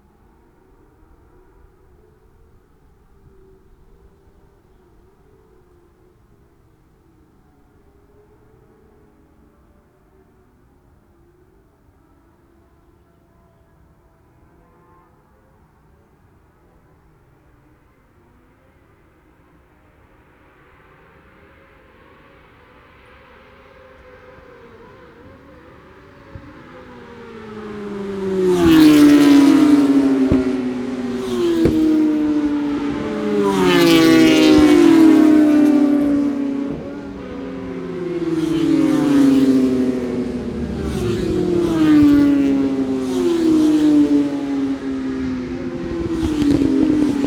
Scarborough UK - Scarborough Road Races 2017 ... 600s ...
Cock o' the North road races ... Oliver's Mount ... 600cc motorbike practice ...